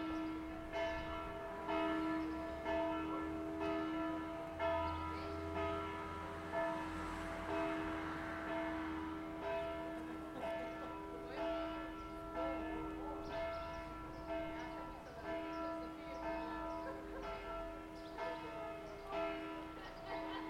Church bells - Church bells; Sunday Mass
Church bells at noon. People going home from Sunday Mass. Birds (common house martin) singing. Village life on a Sunday. Recorded with Zoom H2n (XY, on a tripod, windscreen, gain at approximately 8.5).